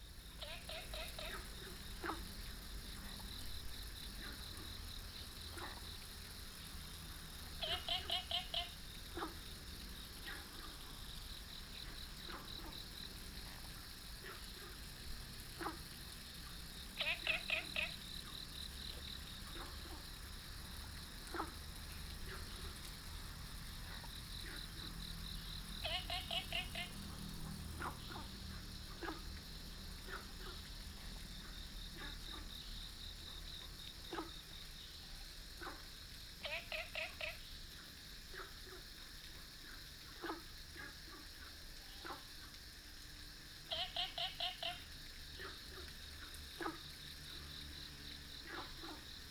桃米溪, Puli Township - Frogs chirping
Frogs chirping
Binaural recordings
Sony PCM D100+ Soundman OKM II